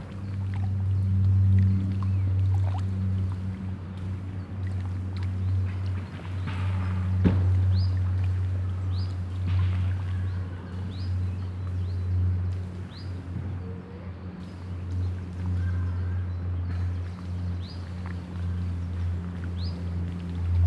Heisingen, Essen, Deutschland - essen, lanfermanfähre, lake ambience
Am Ufer des Baldeney Sees an einem sonnigen Morgen im Frühsommer. Die Ambience des Sees mit Enten, Vögeln und dem Plätschern des Wassers am Seeufer. Ein Flugzeug kreuzt den Himmel. Im Hintergrund Spaziergänger.
At the seaside on a sunny early summer morning.The ambience of the lake with water and duck sounds. A plane is crosing the sky.
Projekt - Stadtklang//: Hörorte - topographic field recordings and social ambiences
Essen, Germany, 12 April 2014, 09:30